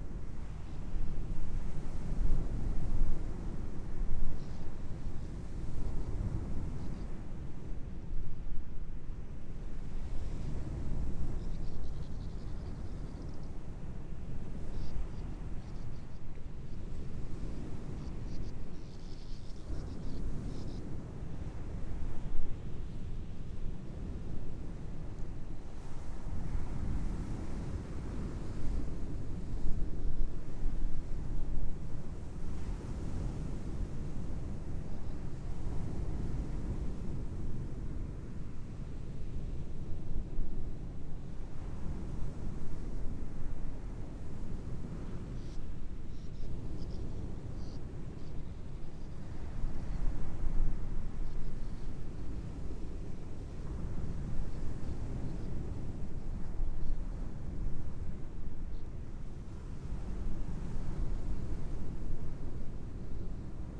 Covehithe Beach, Suffolk, UK - sand martins
Sand Martins can just about be heard coming and going as they fight over nesting holes in the cliffs high up above this lovely, windswept beach at low tide, at Covehithe. Not the best microphones, but the martins were so beautiful.